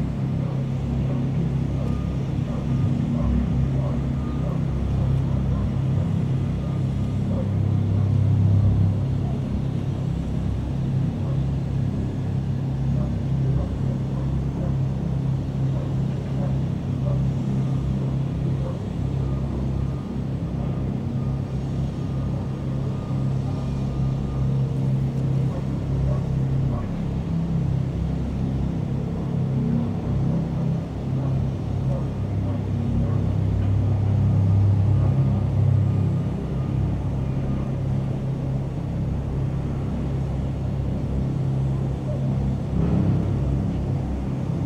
Binckhorst, Laak, The Netherlands - Pipe
Recording through a big pipe.
Plutostraat, Laak, The Netherlands, 21 May 2012